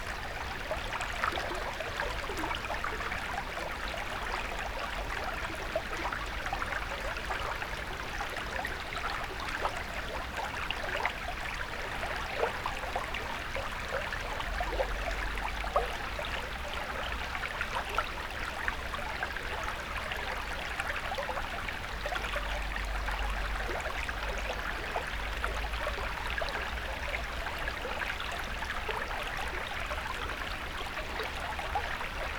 Utena, Lithuania, a river, binaural